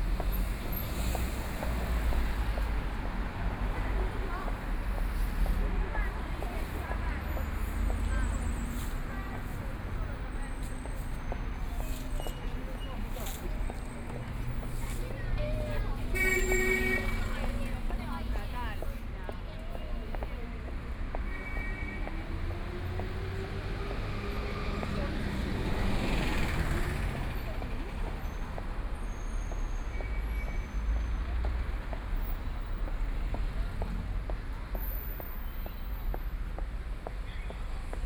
Baoqing Road, Shanghai - Follow the footsteps
Walking in the street, Follow the footsteps, Binaural recording, Zoom H6+ Soundman OKM II
Shanghai, China, 3 December 2013